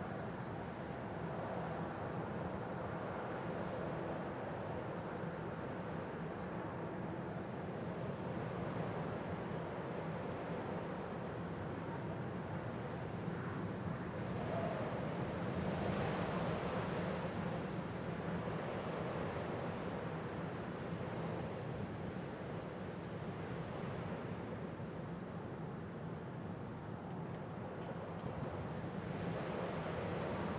Marks Tey, Colchester, Essex, UK - Wind and Traffic
Recorded around 2am on a bridge over railway tracks. Stereo recording, best listened with headphones.